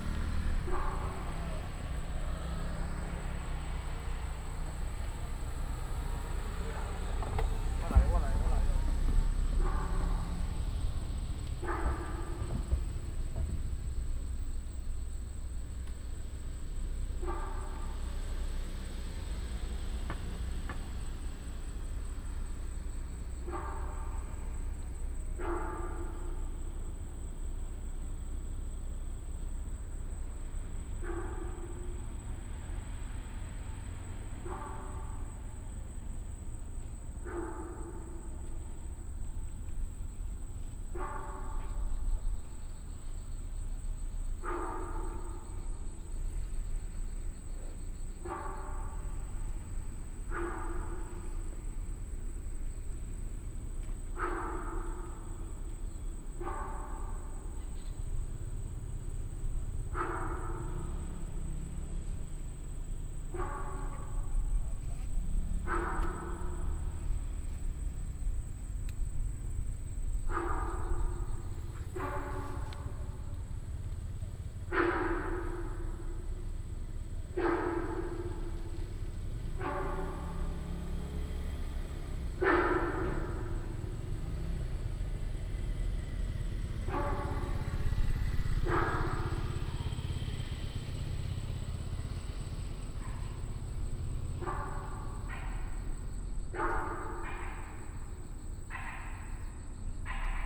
八斗子海濱公園, Zhongzheng District, Keelung City - Underground culvert
Traffic Sound, Underground culvert, frog sound
Zhongzheng District, 八斗子海濱公園步道, 2 August 2016, ~17:00